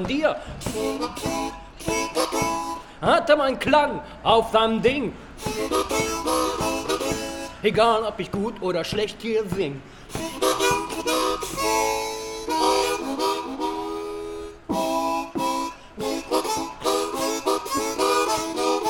musician playing in front of Jesus house
Berlin, Germany